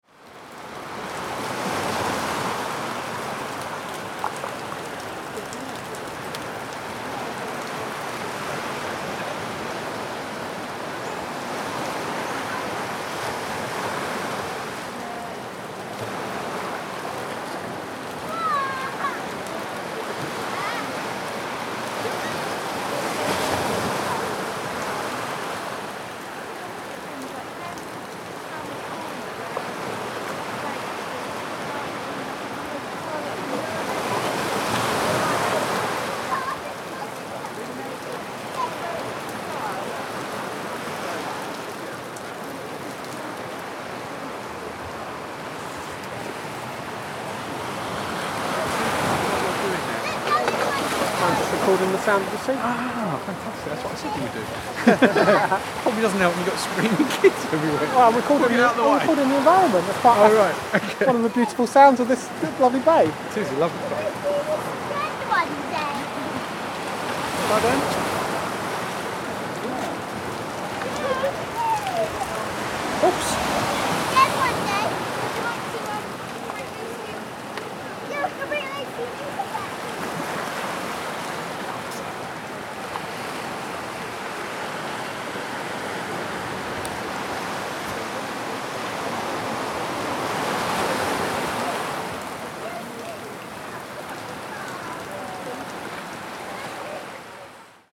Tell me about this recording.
what you doing? recording the seashore